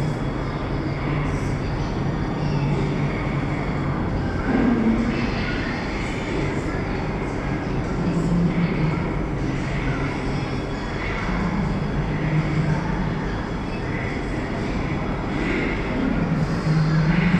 Oberkassel, Düsseldorf, Deutschland - Düsseldorf, Stoschek Collection, video installation
At the ground floor of the exhibition hall inside the left side of the hall. The sound of a three parted video installation. Recorded during the exhibition numer six - flaming creatures.
This recording is part of the exhibition project - sonic states
soundmap nrw - topographic field recordings, social ambiences and art places